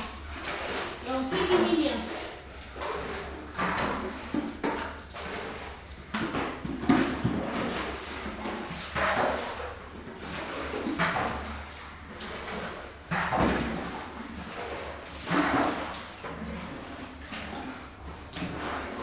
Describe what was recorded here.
Shovelling water out of the area where Rádio Zero new studios will be, after a big day of rain has siped inside the building. Olympus LS-5